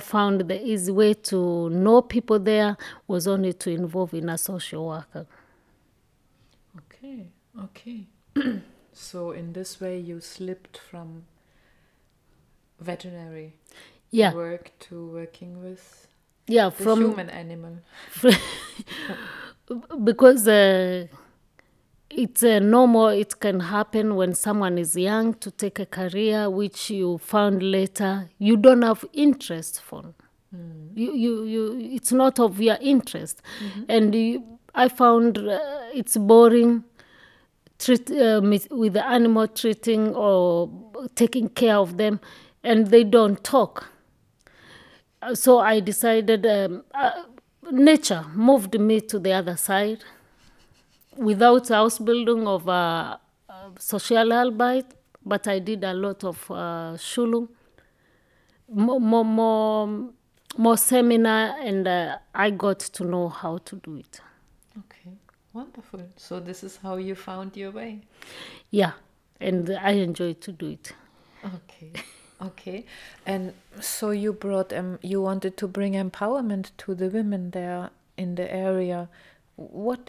Hoetmar, Germany - Now, Ive come home...
Maryann followed her love, and then-husband to Germany. She left behind a well-loved life-style and culture and a functioning life. There were a number of years that she even regretted leaving – as she tells us in reflection. But, Maryann grew strong on the challenges, and moved on to strengthen others: “the things that worried me, are the things I can make someone else strong from”.... and where she is now, she says, she has come home...
the entire interview is archived here: